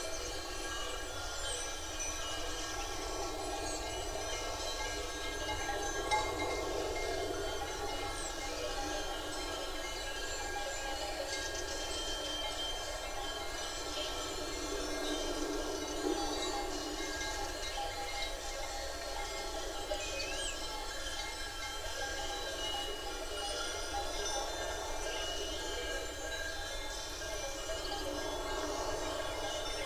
Evoramonte, Portugal - Évoramonte dawn with goats
Dawn at Évoramonte hill, Alentejo, goats, dogs and birds make up the soundscape. Recorded with a stereo matched pair of primo 172 capsules into a SD mixpre6.